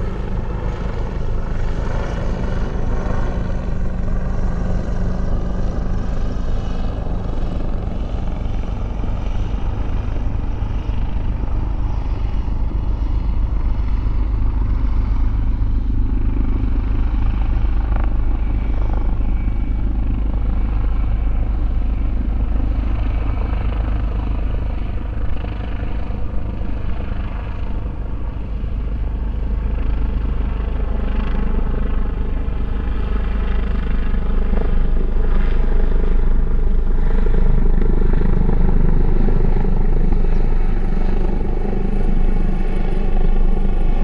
Lake Biwa Shoreline, Kusatsu-shi, Shiga-ken, Japan - Helicopters
Soundscape dominated by three helicopters circling overhead and to the southwest of the Lake Biwa shoreline in Kusatsu. The helicopters appeared to be assisting in a police investigation. Audio was captured by a Sony PCM-M10 recorder and two Micbooster Clippy omnidirectional mics attached to a bicycle handelbar bag for a quasi-binaural sound image.